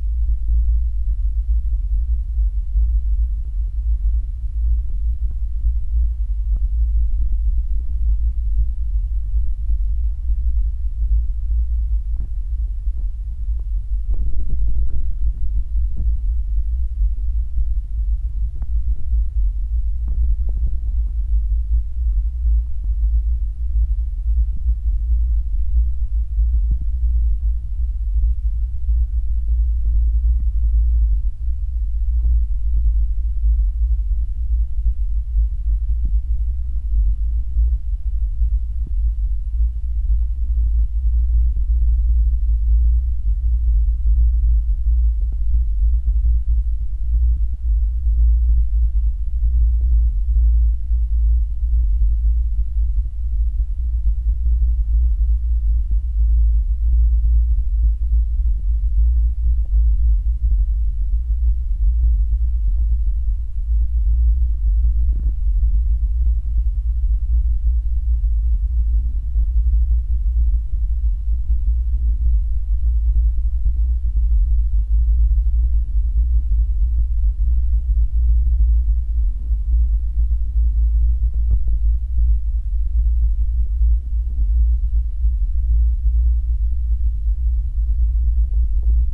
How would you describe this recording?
Metabolic Studio Sonic Division Archives: Headphones required. Telephone pole vibrating its fundamental frequency in aeolian fashion from wind blowing across lake. Very low frequency. Recorded with contact mic attached directly to telephone pole.